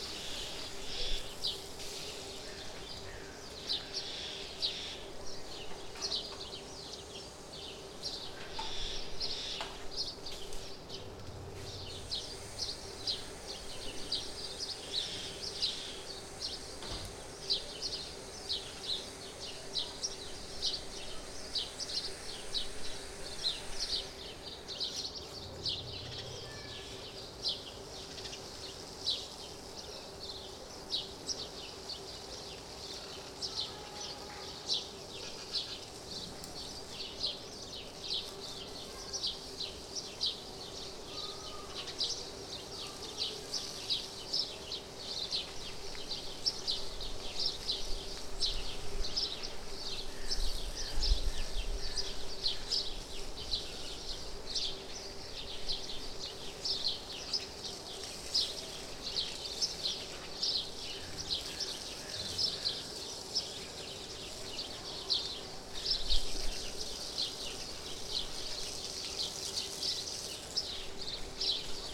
The birds get ready for a summer sunset, the insects as well, the youth orchestra is preparing for the evening concert and the gardener hosing the orchard trees.
מחוז ירושלים, ישראל, June 2022